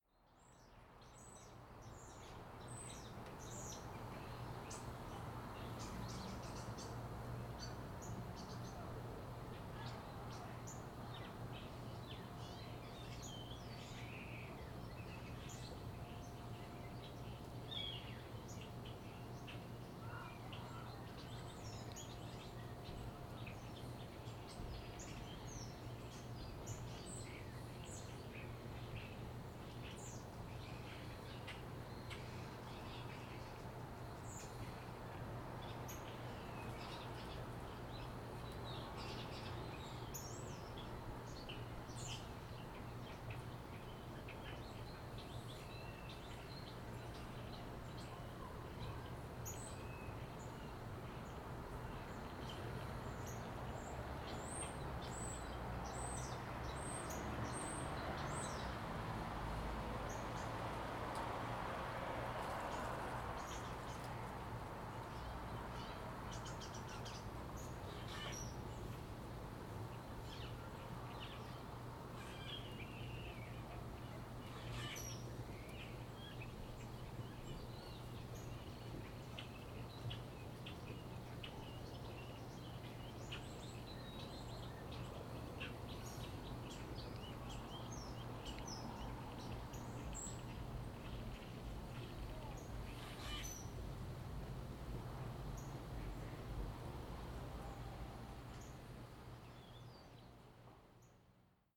Kingston, ON, Canada - Ornithological Orchestra
The sounds of birds and other wildlife, high up amongst the trees in a residential neighbourhood. The occasional human presence interrupts, but for the most part, the listener is alone with the animals.
20 September 2020, 17:32